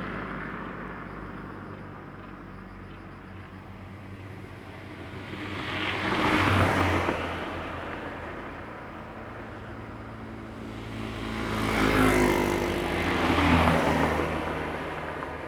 富源村, Taitung County - Birds and Traffic Sound
Birds singing, Traffic Sound, Small village, In the side of the road
Zoom H2n MS+XY
Taitung County, Taiwan, 8 September